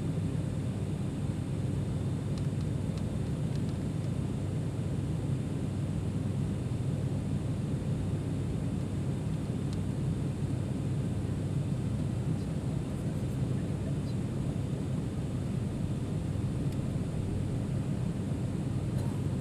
AMBIENTE INTERIOR AVION ATR 42 RECORRIDO PISTA Y DESPEGUE, GRABACIÓN STEREO X/Y TASCAM DR-40. GRABADO POR JOSE LUIS MANTILLA GOMEZ.